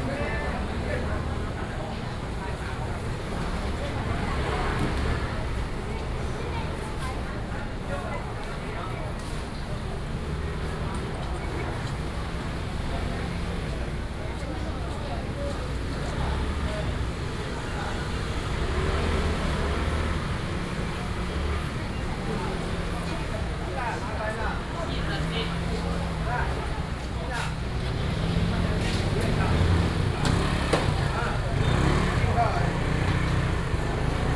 Xinxing Rd., Banqiao Dist., New Taipei City - Walking in the traditional market
Walking in the traditional market, Traffic Sound